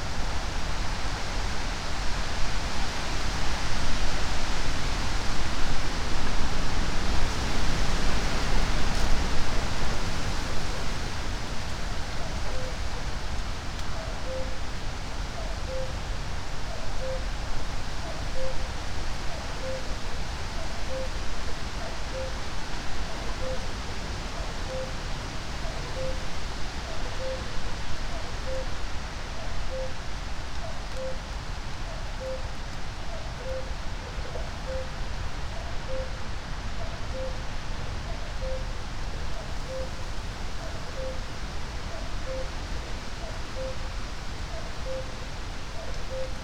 14:24 Berlin, Wuhletal - wetland / forest ambience